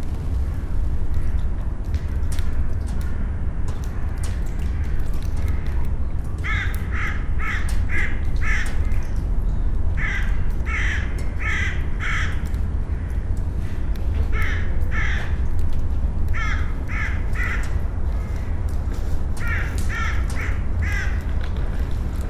We are eating on the furnaces of the abandoned coke plant. A crow is looking to our bread and is asking us, in aim to have some of it.